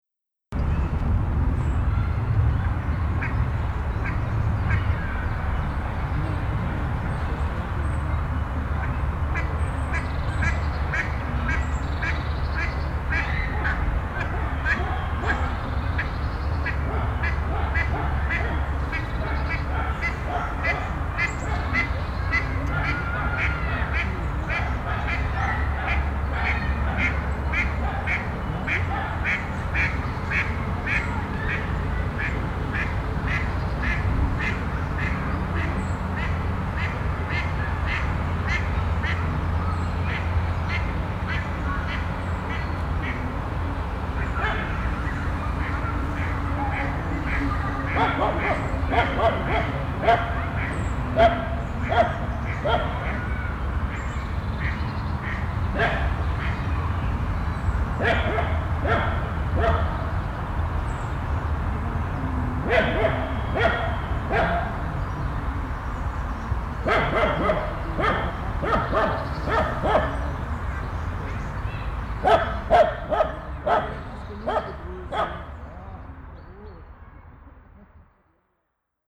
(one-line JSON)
{"title": "Werden, Essen, Deutschland - essen, werden, brehm island", "date": "2014-04-18 15:00:00", "description": "Auf dem Fussweg an der Stadtmauer. Der Klang der Enten, Fussgänger und ein bellender Dackel an einem sonnigen aber windigem Tag.\nProjekt - Stadtklang//: Hörorte - topographic field recordings and social ambiences", "latitude": "51.39", "longitude": "7.00", "altitude": "52", "timezone": "Europe/Berlin"}